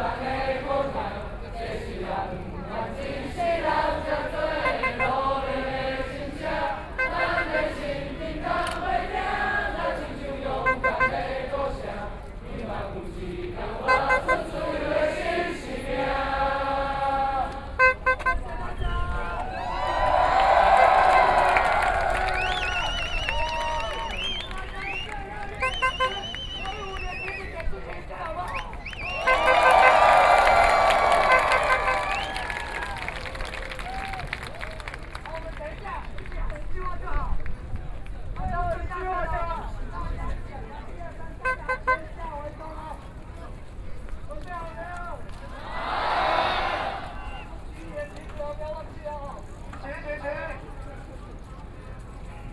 Thousand Protesters gather making event, Everyone singing protest songs, Selling ice cream diner, Shouting slogans, Binaural recordings, Sony Pcm d50+ Soundman OKM II
Taipei City, Zhongzheng District, 林森南路地下道, 10 October